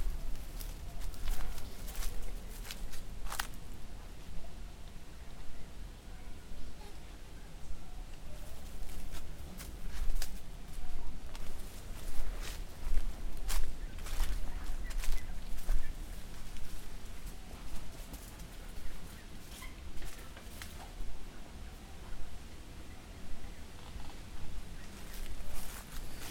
Finca Anatolia, Morrogacho, Paseo junto a la casa
Paseo por los alrededores de la casa
Grillos, Cigarras y campanas
3 February 2011, ~00:00